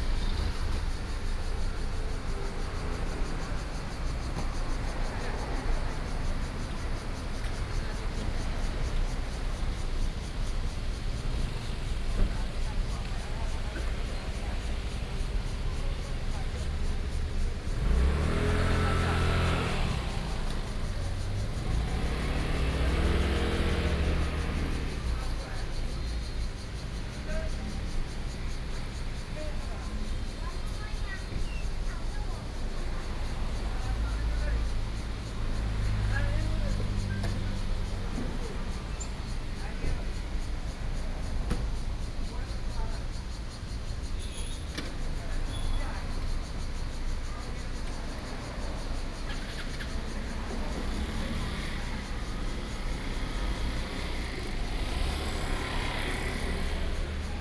鹿野村, Luye Township - Small towns
In the street, Traffic Sound, Market, Small towns